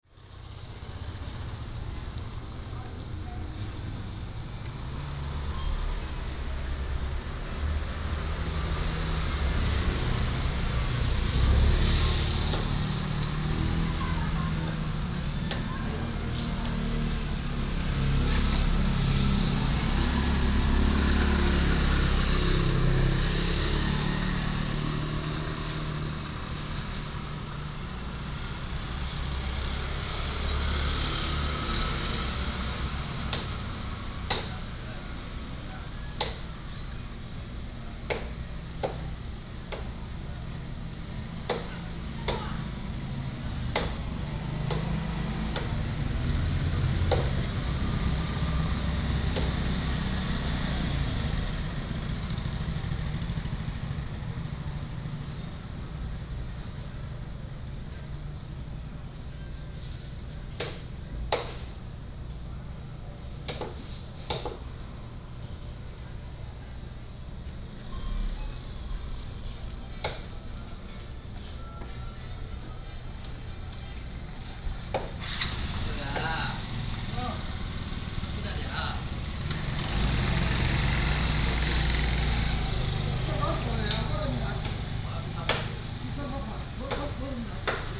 Minsheng Rd., Liuqiu Township - In the street
In the street, In front of the convenience store